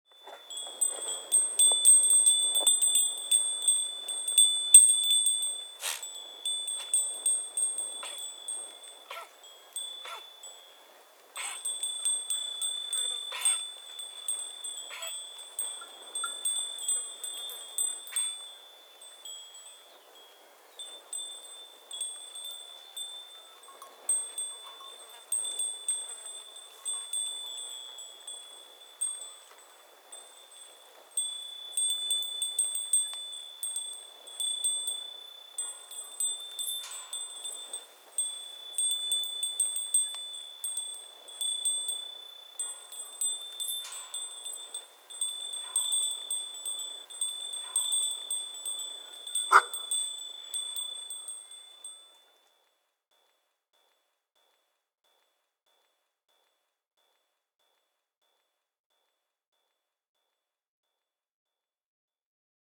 Goats at Santorini, Grecja - (54) XY Goats bells and coughing
XY stereo recording of a herd of goats with bells, with one.... coughing or having hiccups.
ZoomH2n